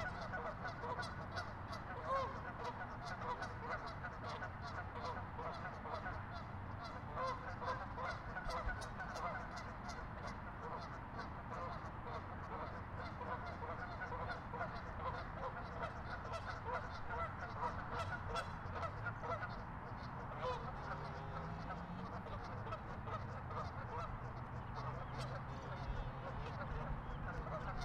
Pikes Peak Greenway Trail, Colorado Springs, CO, USA - GeeseMemorialValleyPark27April2018
A flock of geese honking and fighting on a pond
26 April 2018